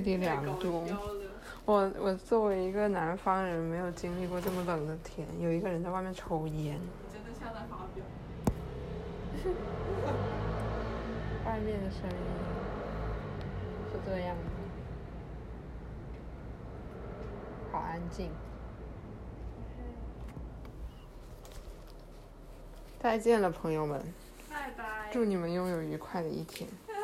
Namsan-dong, Geumjeong-gu, Busan, 韩国 - winter night, chatting in the room
recorded from the 4th floor
some street sounds from outside
chatting
대한민국